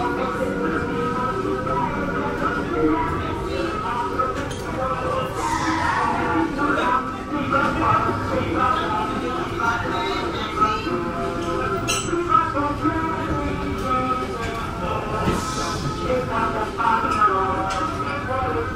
pendant le tournage de Signature